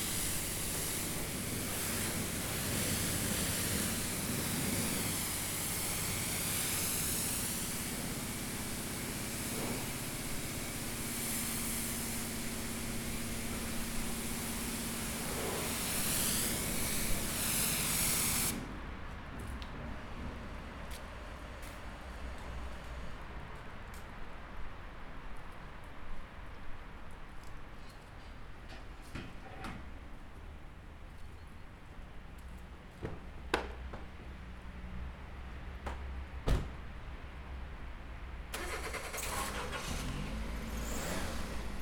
BP gas station, Hetmanska Str. manual car wash